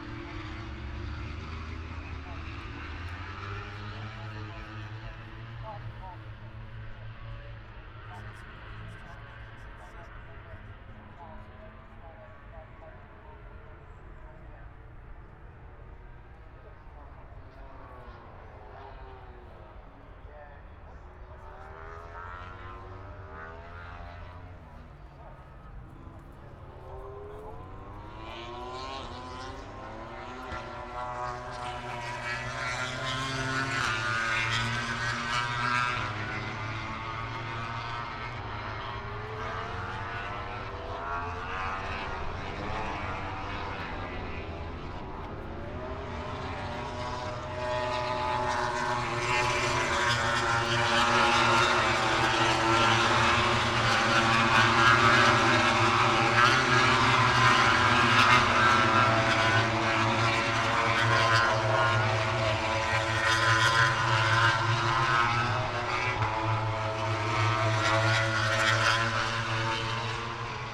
Silverstone Circuit, Towcester, UK - British Motorcycle Grand Prix 2017 ... moto grand prix ...
moto grand prix ... free practice four ... Becketts Corner ... open lavaliers clipped to a chair seat ... all sorts of background noise from helicopters to commentary ... needless to say it's a wee bit noisy ...
26 August 2017